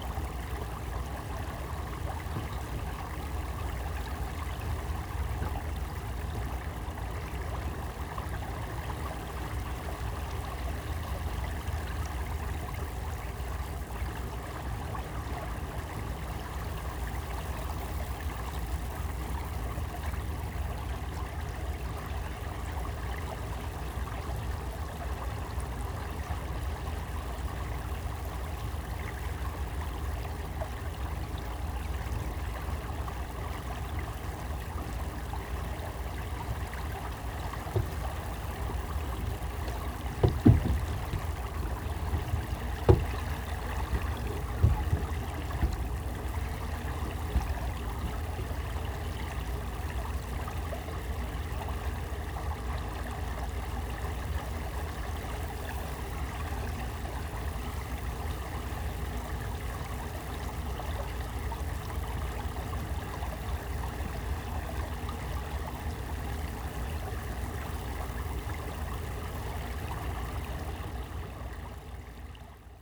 A beautiful March day on a slow moving small electric boat hired for 2 hours to enjoy the broads and channels in this peaceful landscape. Several Marsh Harriers were gliding overhead, sometimes displaying to each other. The electric motor sound is audible but compared to diesel thankfully quiet.
England, United Kingdom